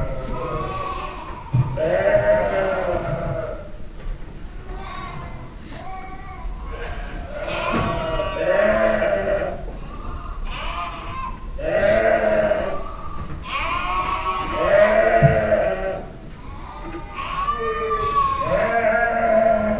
Henceforth, habitual sheep yellings, because of the missing lambs.
Ispagnac, France, 4 March 2015